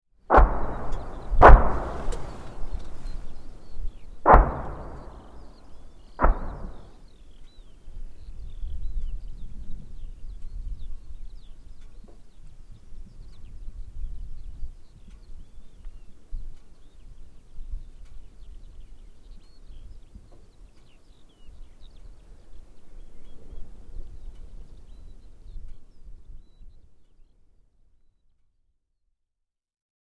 Otterburn Artillery Range - guns and skylarks
At archaeological site, overlooking valley with munitions storage bunkers. Davyshiel Commons and BSA site.